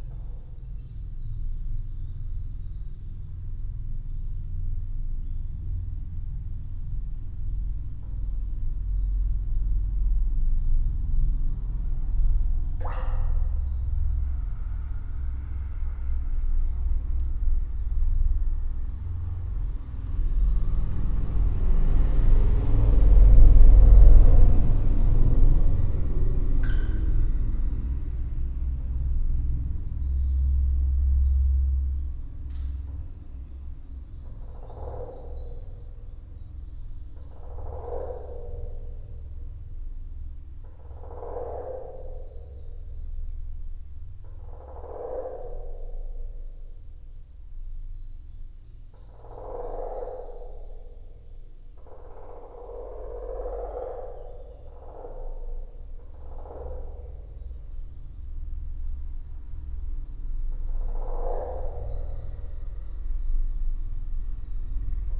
Antalieptė, Lithuania, in the well
some well at pumping station. I have managed to put my microphones into it...